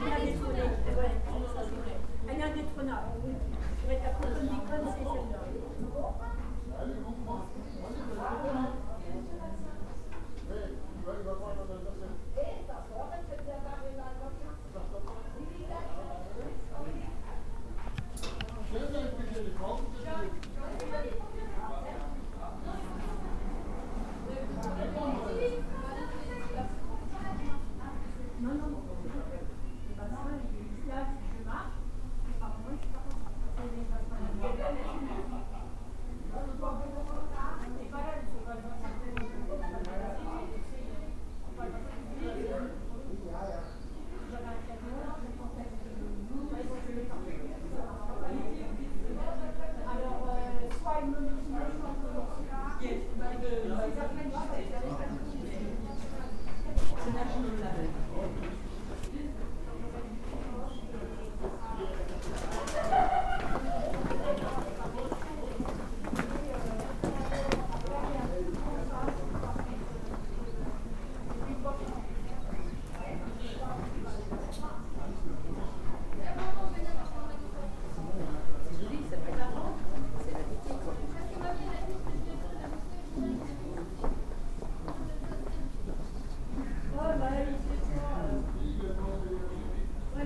Eguisheim, Rue du Allmend, Frankreich - Conversation at lunch
Conversation of people having lunch (with open windows)